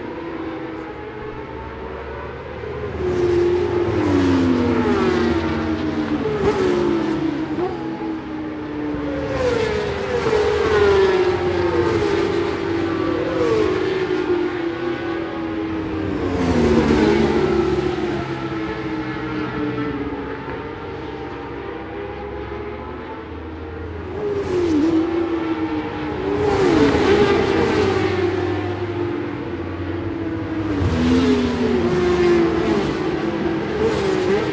British Superbikes ... 600 FP 2 ... one point stereo mic to minidisk ...